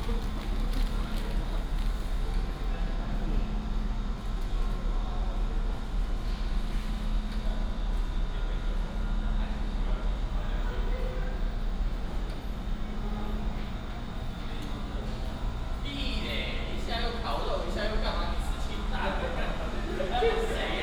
新竹轉運站, Hsinchu City - At the bus transfer station
At the bus transfer station, Traffic sound
Hsinchu City, Taiwan, April 2017